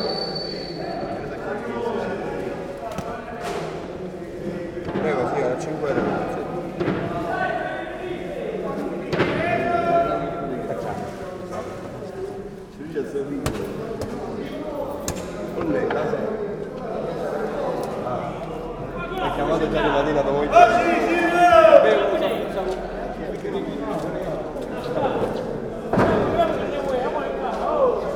Trapani, Via Cristoforo Colombo, Fish market
Trapani, Italy, 7 September, 11:07